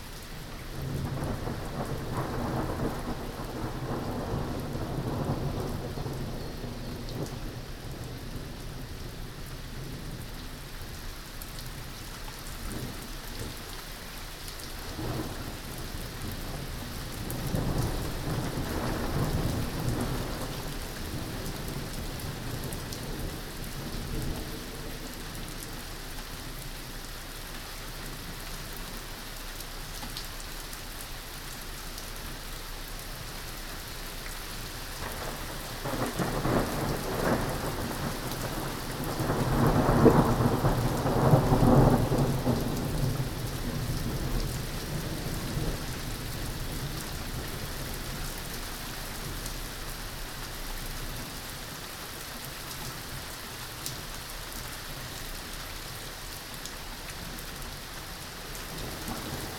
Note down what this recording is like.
Recorded on a roofterrace. Thunder: Rose ringed parakeets and pigeons are eating but eventually they flee the rain that turns into hail a few minutes later. You can also hear the Carillon of the Grote Kerk. Binaural recording.